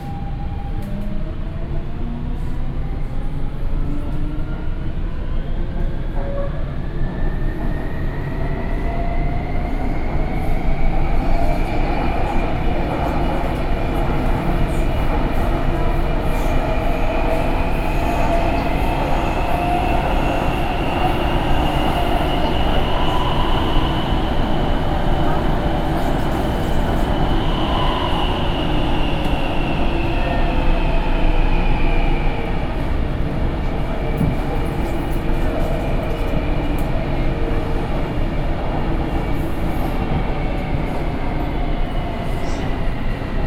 Banqiao District, New Taipei City - Take the MRT